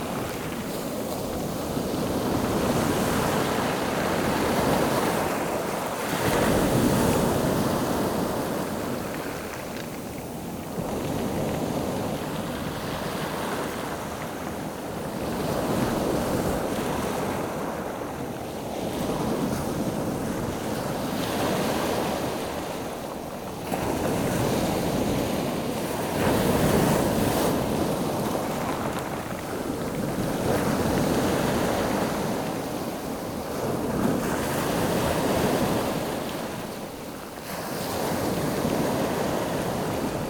{
  "title": "Criel-sur-Mer, France - The sea at Mesnil-Val beach",
  "date": "2017-11-02 07:15:00",
  "description": "Sound of the sea, with waves lapping on the pebbles, at the Mesnil-Val beach during high tide. Lot of wind and lot of waves !",
  "latitude": "50.04",
  "longitude": "1.33",
  "altitude": "9",
  "timezone": "Europe/Paris"
}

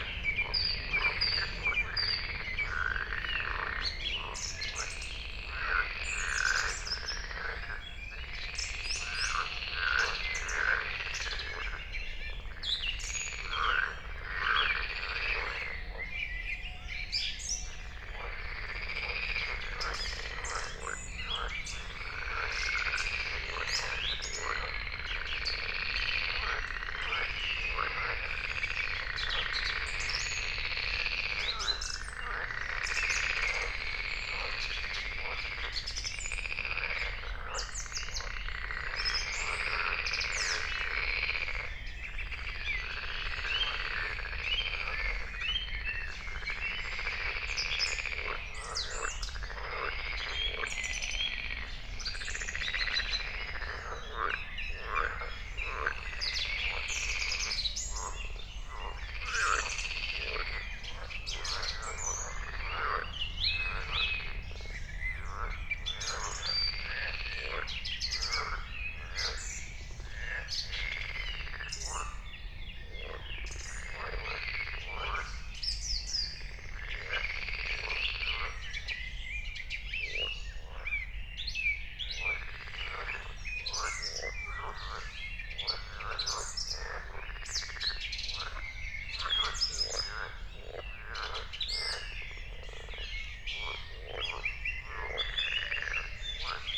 {
  "date": "2021-06-06 04:00:00",
  "description": "04:00 Berlin, Königsheide, Teich - pond ambience",
  "latitude": "52.45",
  "longitude": "13.49",
  "altitude": "38",
  "timezone": "Europe/Berlin"
}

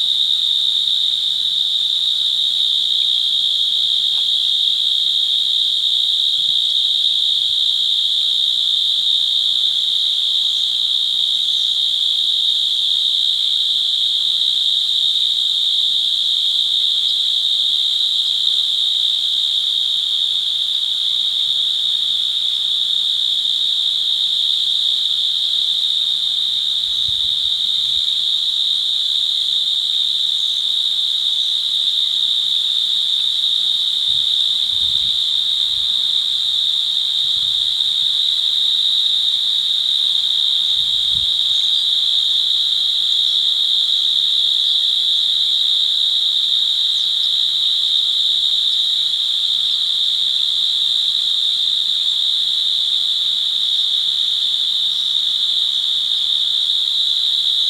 {
  "title": "Glen Cairn - Kanata South Business Park, Ottawa, ON, Canada - Field Crickets",
  "date": "2016-10-05 14:00:00",
  "description": "Warm sunny day in field off bike path. Some wind and traffic EQ'd out with high-pass filter. Used Tascam DR-08 handheld recorder.",
  "latitude": "45.28",
  "longitude": "-75.88",
  "altitude": "105",
  "timezone": "GMT+1"
}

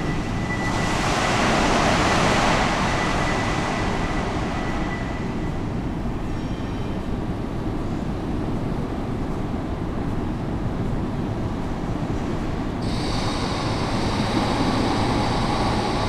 {
  "title": "Charleroi, Belgium - Industrial soundscape",
  "date": "2018-08-15 08:10:00",
  "description": "Industrial soundscape near the Thy-Marcinelle wire-drawing plant. A worker unload metal scrap from a boat, and another worker is destroying a wall with an horrible drill. Not a very relaxing sound...",
  "latitude": "50.41",
  "longitude": "4.43",
  "altitude": "103",
  "timezone": "GMT+1"
}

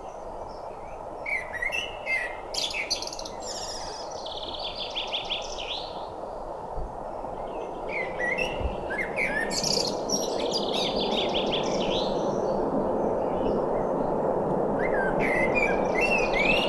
{
  "title": "Mont-Saint-Guibert, Belgique - Blackbird",
  "date": "2016-05-26 20:40:00",
  "description": "In the cemetery of Mont-Saint-Guibert, a blackbird is giving a beautiful concert. Trains are omnipresent near everywhere in this small city.",
  "latitude": "50.64",
  "longitude": "4.61",
  "altitude": "81",
  "timezone": "Europe/Brussels"
}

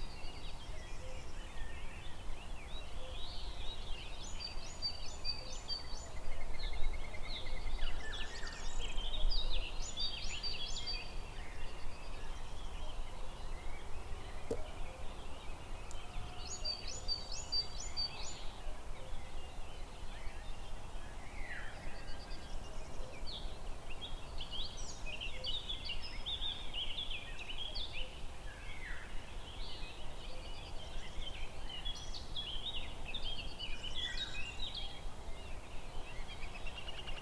{
  "title": "Čovići 164 A - birds. morning. river.",
  "date": "2021-05-09 05:47:00",
  "description": "birds. morning. river.",
  "latitude": "44.82",
  "longitude": "15.30",
  "altitude": "449",
  "timezone": "Europe/Zagreb"
}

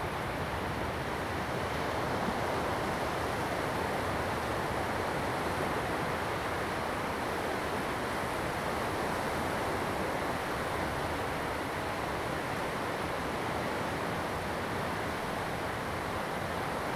{
  "title": "前洲子, 淡水區, New Taipei City - At the beach",
  "date": "2016-04-15 09:42:00",
  "description": "At the beach, Aircraft flying through, Sound of the waves\nZoom H2n MS+XY + H6 XY",
  "latitude": "25.22",
  "longitude": "121.44",
  "altitude": "3",
  "timezone": "Asia/Taipei"
}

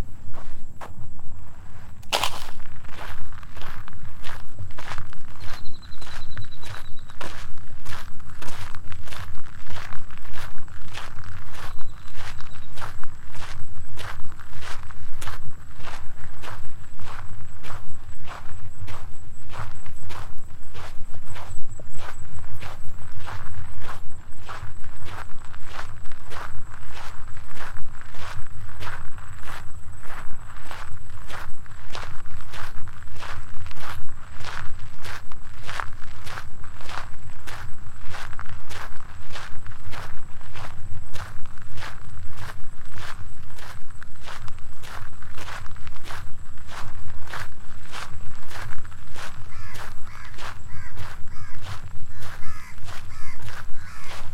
{
  "title": "Jalan Pulau Melaka, Taman Pulau Melaka, Melaka, Malaysia - Trail walking",
  "date": "2017-10-31 18:55:00",
  "description": "One evening after work I decided to go to this place called Pulau Melaka or in translation Melaka Island. A small man made island is currently developing (shopping malls). Trying to minimize the grip movement with the recorder attached to a mini tripod. Sandy terrain plus the raven give me the creeps.",
  "latitude": "2.18",
  "longitude": "102.24",
  "timezone": "Asia/Kuala_Lumpur"
}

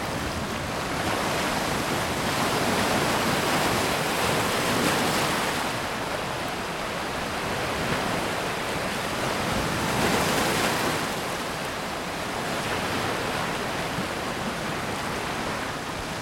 {
  "title": "Coast of the Gulf of Finland near Fort Rif, Kronstadt, Russia - fortrif",
  "date": "2017-11-01 16:00:00",
  "description": "Windy day at Kronstadt.",
  "latitude": "60.03",
  "longitude": "29.64",
  "timezone": "Europe/Moscow"
}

